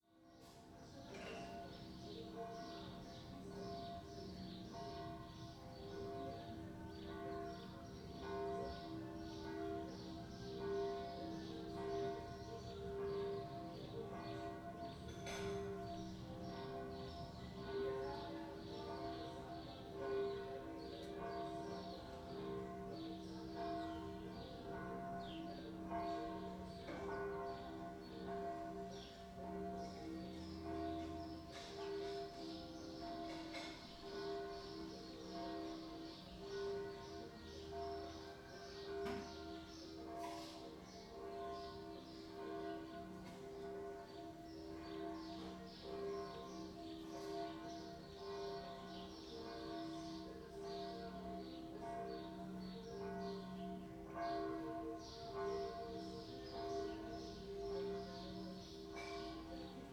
Berlin Bürknerstr., backyard window - summer sunday morning
warm and light summer morning. church bells sound changing with the wind.
Berlin, Germany, June 2010